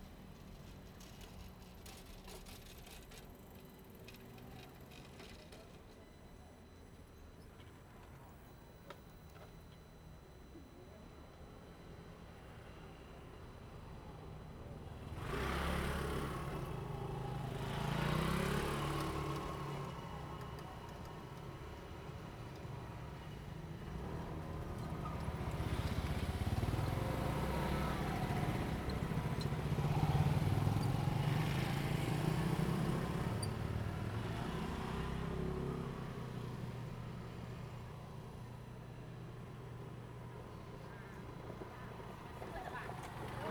中山東路一段223巷129弄, Zhongli Dist. - The train runs through
in the Railroad Crossing, Traffic sound, The train runs through
Zoom H2n MS+XY
Zhongli District, Taoyuan City, Taiwan, 20 August 2017